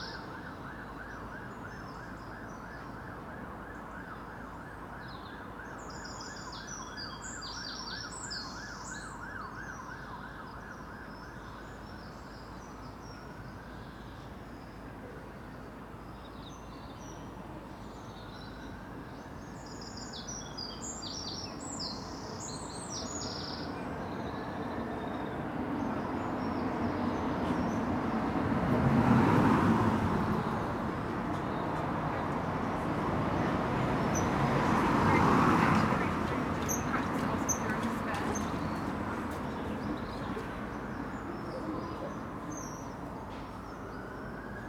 The Poplars Roseworth Avenue The Grove Yonder Cottage
Fencing contains the laurel bush gloom
The bursting twisted tracery of tree bark
A dog sniffs the gate post
Cyclist chat within the pulse of traffic
North East England, England, United Kingdom, 24 February 2021